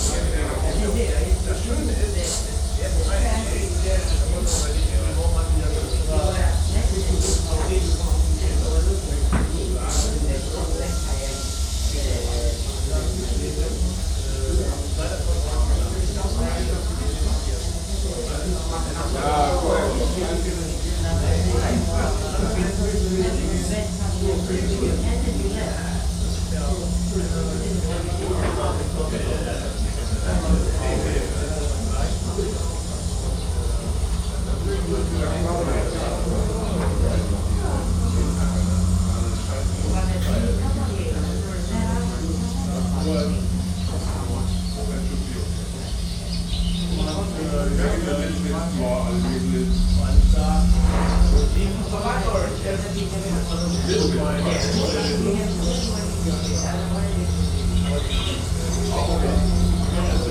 berlin, manteuffelstraße: club - the city, the country & me: exibition of heiner weiss
field recordings and photo exibition of heiner weiss
the city, the country & me: march 22, 2015